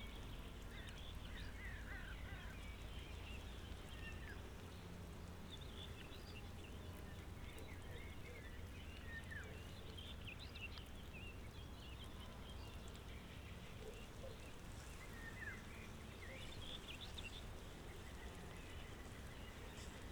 seems the crows became a bit nervous about the recordist's presence.
(SD702, Audio Technica BP4025)
Storkau, Germany, 19 May